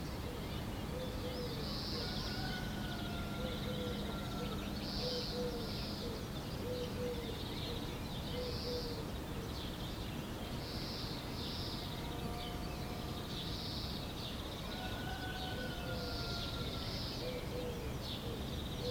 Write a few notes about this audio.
TASCAM DR-100mkII with integrated Mics